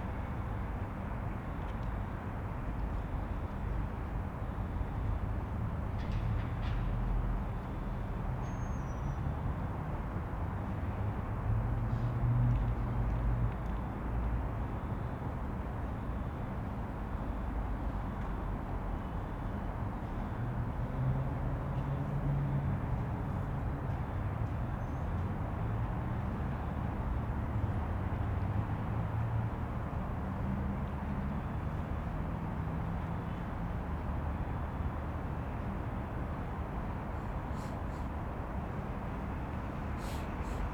ул. Тимирязева, Челябинск, Челябинская обл., Россия - Chelyabinsk, evening, a small traffic of cars, passing people
the square in front of the drama theater, not far from the main square of the city.
Very few people on the street.